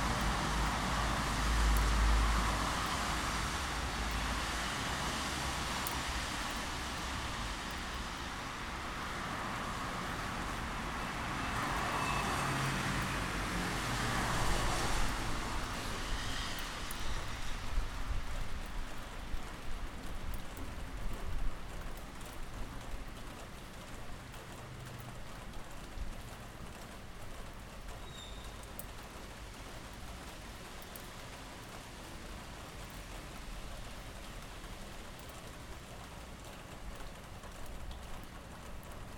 La Salud, Barcelona, Barcelona, España - Afternoon rain
Afternoon rain recorded from my bedrrom window.
Barcelona, Spain, 22 September